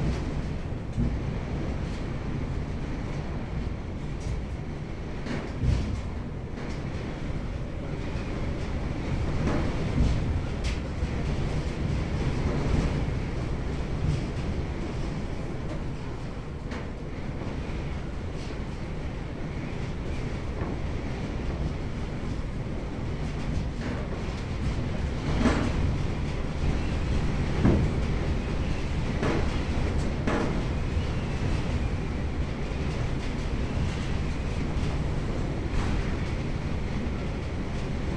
Pampa Guanaco, Región de Magallanes y de la Antártica Chilena, Chile - storm log - abandoned short wave transmitter station
Abandoned short wave transmitter station, inside metal shelter, wind W 45km/h.
Aeropuerto Pampa Guanaco is a rural airport near Inútil Bay, serving Camerón in the Timaukel commune.